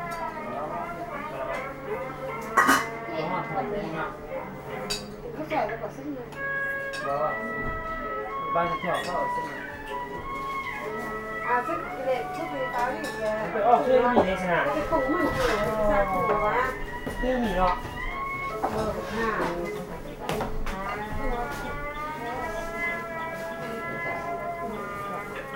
{"title": "Luang Prabang, Laos", "date": "2009-04-24 15:13:00", "description": "Noodle soup in Luang Prabang", "latitude": "19.89", "longitude": "102.14", "altitude": "298", "timezone": "Asia/Vientiane"}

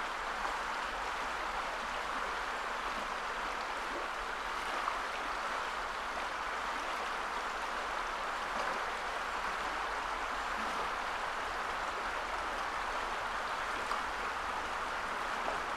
{"title": "River Dart, Colston Rd, Buckfastleogh, Devon, UK - Landscape01 RiverDart SteamTrain", "date": "2013-06-12 21:04:00", "description": "recorded under the steam railway bridge on the River Dart, Colston Rd, Buckfastleigh", "latitude": "50.48", "longitude": "-3.76", "altitude": "33", "timezone": "Europe/London"}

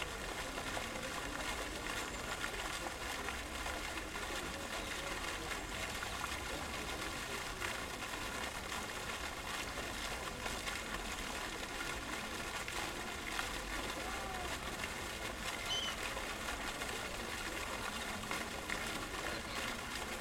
{"title": "Fitzgeralds Park, Cork city - Fitzgeralds Park Fountain, Cork city", "date": "2020-11-29 15:30:00", "description": "Fitzgerald's Park on a dark and grey Sunday afternoon.\nRecorded onto a Zoom H5 with an Audio Technica AT2022.", "latitude": "51.90", "longitude": "-8.50", "altitude": "6", "timezone": "Europe/Dublin"}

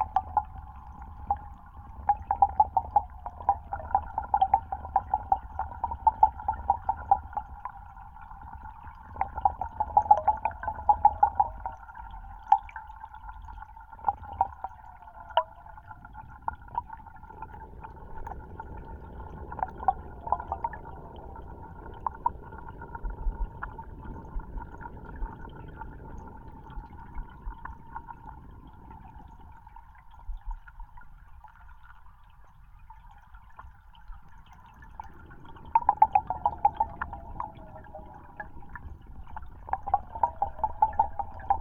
{"title": "Kintai, Lithuania, hydrophone in port", "date": "2022-07-20 21:25:00", "description": "Another underwater recording for \"Kintai. Kitaip\" art project/residence", "latitude": "55.42", "longitude": "21.25", "timezone": "Europe/Vilnius"}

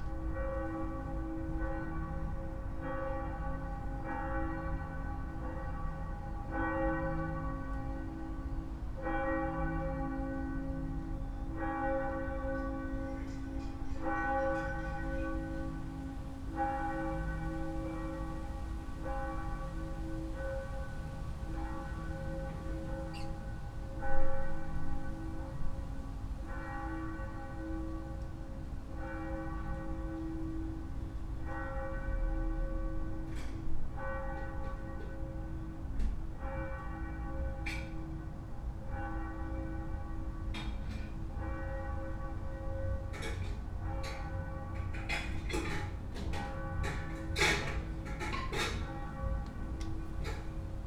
{"title": "Berlin Bürknerstr., backyard window - easter bells", "date": "2014-04-19 21:50:00", "description": "easter bells heard in my backyard", "latitude": "52.49", "longitude": "13.42", "altitude": "45", "timezone": "Europe/Berlin"}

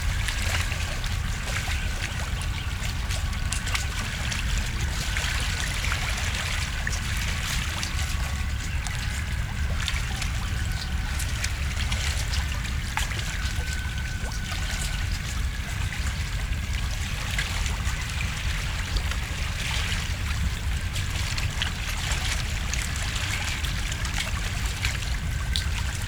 {"title": "wugu, New Taipei City - Mangroves", "date": "2012-01-11 13:22:00", "latitude": "25.11", "longitude": "121.46", "timezone": "Asia/Taipei"}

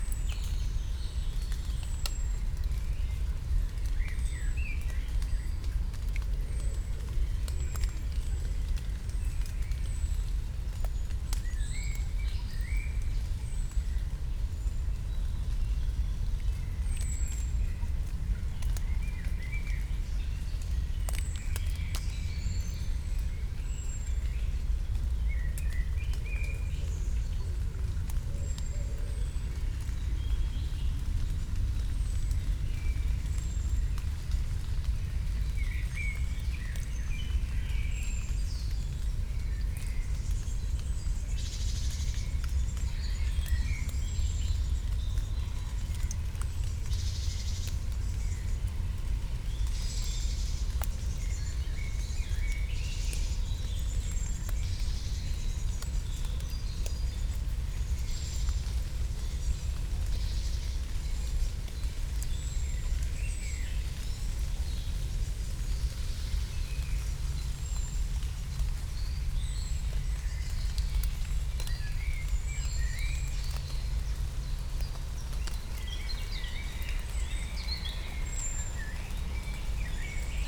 {"title": "Śląski Park Kultury, Chorzów - park ambience /w light rain", "date": "2019-05-22 17:45:00", "description": "ambience at the edge of Śląski Park Kultury, Silesian Park, between Chorzów, Katowice and Siemianowice, distant traffic drone, light rain, very light flow of a little water stream\n(Sony PCM D50, DPA4060)", "latitude": "50.30", "longitude": "18.99", "altitude": "298", "timezone": "Europe/Warsaw"}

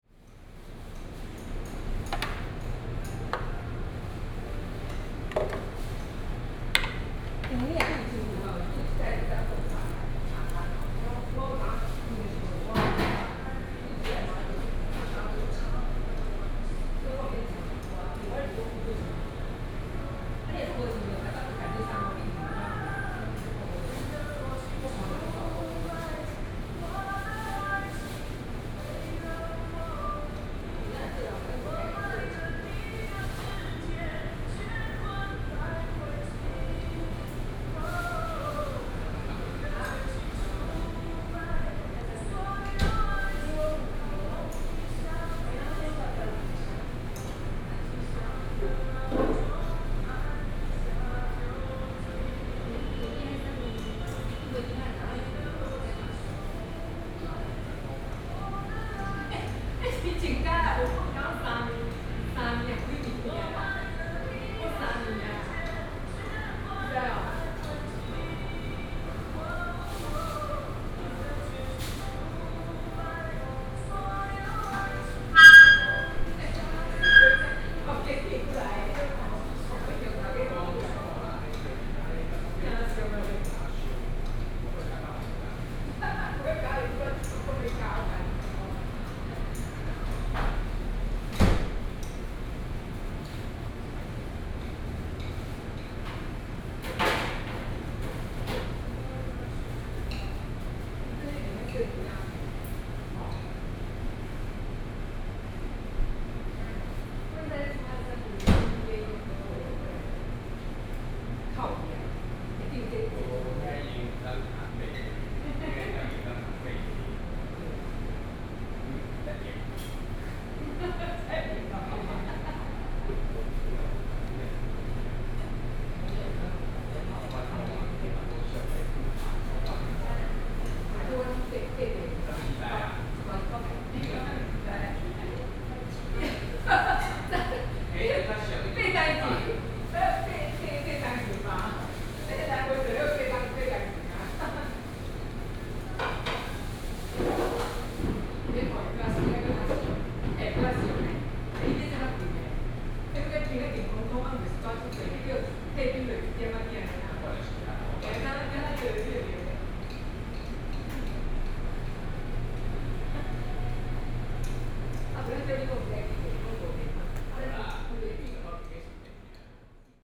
{"title": "三雅嘉義火雞肉飯, Chiayi City - Chicken Meal Restaurant", "date": "2017-04-18 13:24:00", "description": "in the Chicken Meal Restaurant", "latitude": "23.48", "longitude": "120.44", "altitude": "42", "timezone": "Asia/Taipei"}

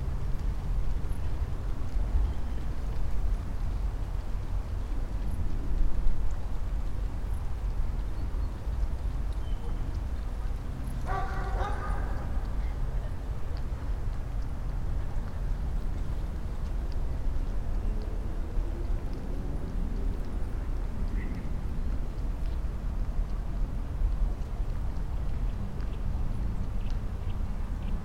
{"title": "Mestni park, Maribor, Slovenia - echos and tramblings", "date": "2012-09-23 17:15:00", "description": "dog and doggy and their echos, aspen, sounds of young autumn", "latitude": "46.57", "longitude": "15.65", "altitude": "312", "timezone": "Europe/Ljubljana"}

